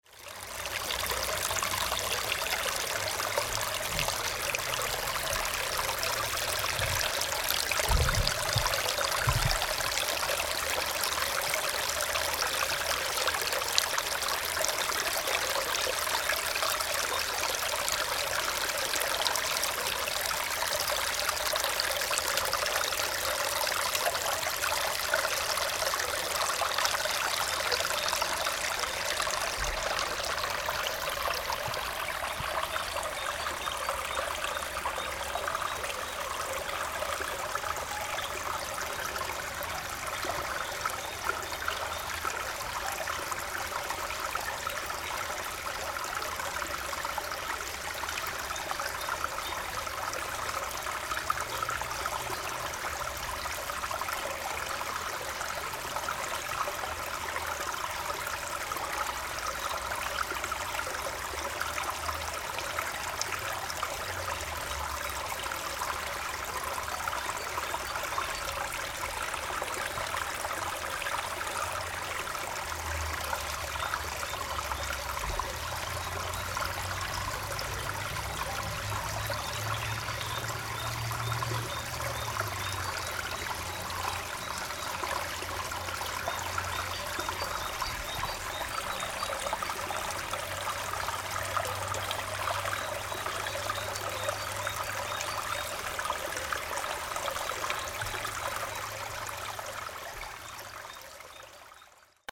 mettmann, neandertal, kleiner düsselzufluss
kleiner lebhafter zufluss der düssel im naturschutzgebiet neandertal, aufnahme morgens im februar 07, direktmicrophonierung, mono erst über dem offenen wasser dann unter kleiner brücke
- soundmap nrw
project: social ambiences/ listen to the people - in & outdoor nearfield recordings